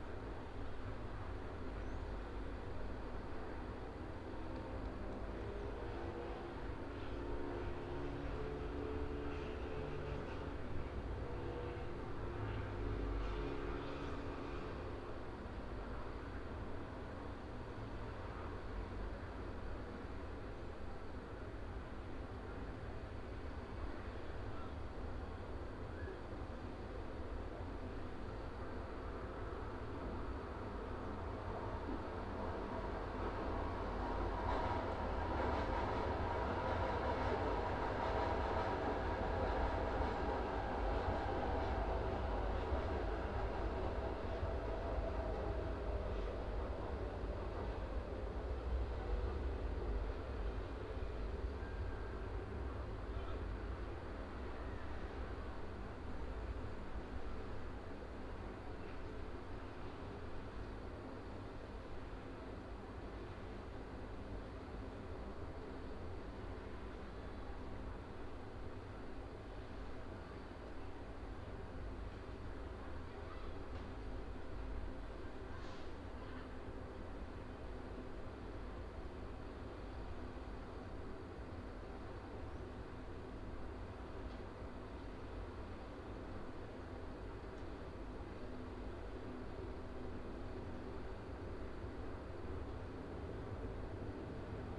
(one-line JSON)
{"title": "R. Ipanema - Mooca, São Paulo - SP, 03164-200, Brasil - CAPTAÇÃO APS UAM 2019 - INTERNA/EXTERNA", "date": "2019-05-01 15:00:00", "description": "Captação de áudio interna para cena. Trabalho APS - Disciplina Captação e edição de áudio 2019/1", "latitude": "-23.55", "longitude": "-46.61", "altitude": "740", "timezone": "America/Sao_Paulo"}